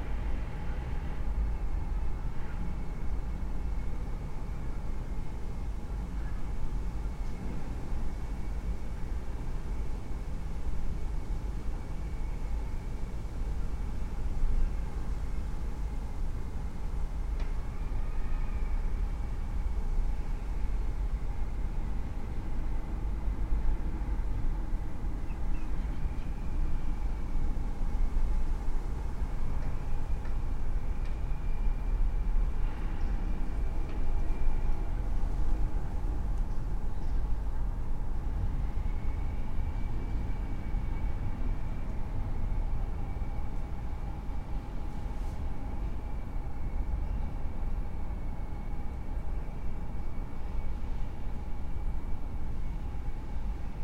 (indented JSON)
{
  "title": "Berlin Bürknerstr., backyard window - winter morning in a Berlin backyard",
  "date": "2020-02-05 10:30:00",
  "description": "(Raspberry PI, ZeroCodec, Primo EM172)",
  "latitude": "52.49",
  "longitude": "13.42",
  "altitude": "45",
  "timezone": "Europe/Berlin"
}